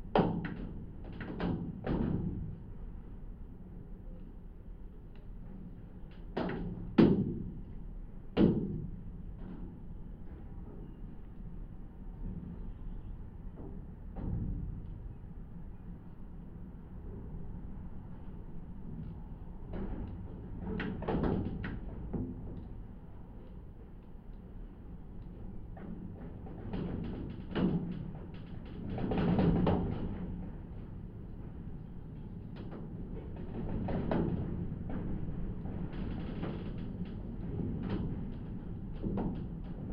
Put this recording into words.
windy day, little aeroport: contact microphones and geophone placed on metallic hangar